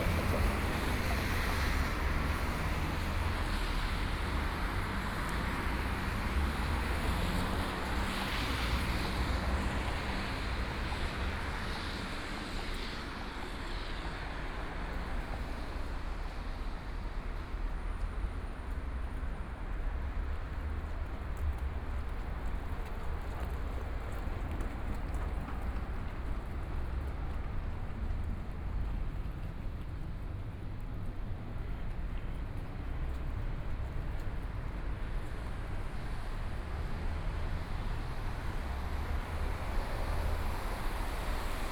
Baknhofplatz, Munich 德國 - In front of the station square
In front of the station square, Traffic sound
Munich, Germany, 11 May 2014, 08:51